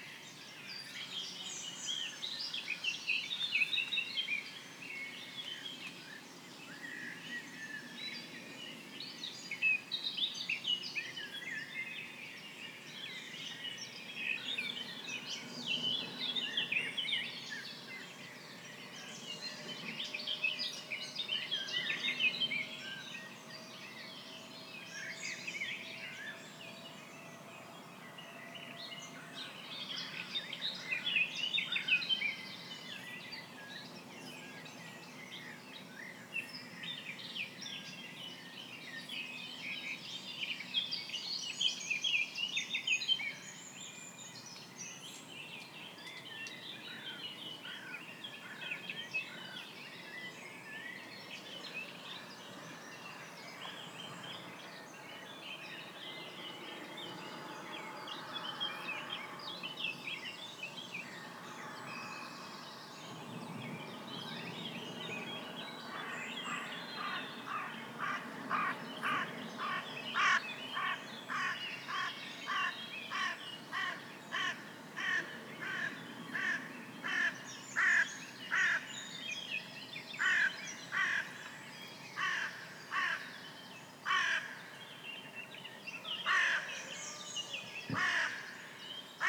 Romsée, Belgium - WLD-2020-Fond du Loup
13 minutes from 5:15 (UTC+2) of dawn chorus. The Fond du Loup is a wooded area on a small stream tributary of the Vesdre river in Chaudfontaine, Belgium. Recorded on a Sony PCM-A10 with a pair of LOM Usi microphones (Primo LM-172).
Road and railroad traffic in the background. Noise of cargo aircrafts taking off Liège (LGG) at 8.3 NM left out.
18 July 2020, 05:15